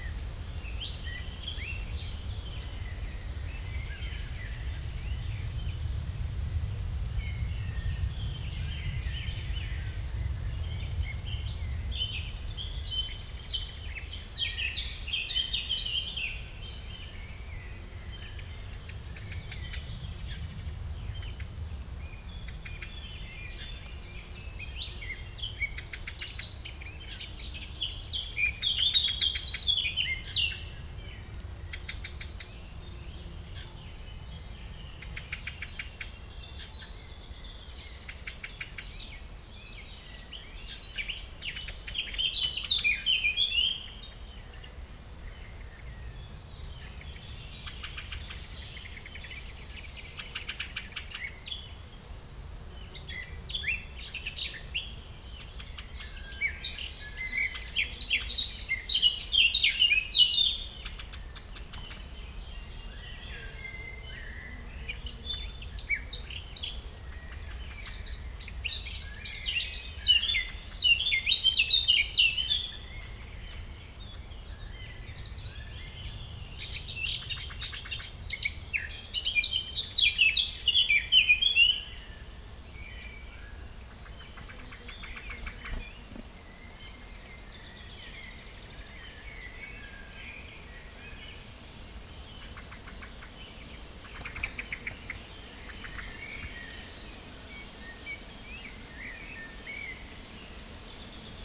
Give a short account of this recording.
Passing through the Bouhey forest in Veuvey-Sur-Ouche, a clearing was full of birds shouts. This happy landscape made me think to put outside the recorder. Although the site is drowned by a significant wind, springtime atmosphere with Eurasian Blackcap and Common Chiffchaff is particularly pleasant. Regularly hornbeam branches clashes.